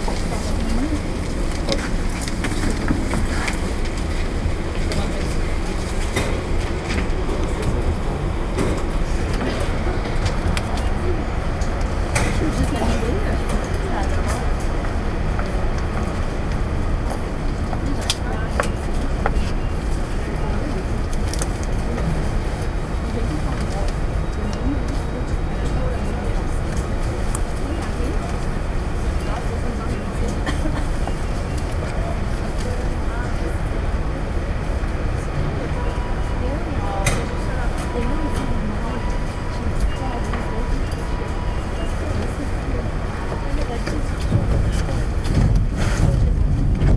equipment used: Ipod Nano with Belkin TuneTalk
Down the stairs, through the turnstile, and into the metro, off we go.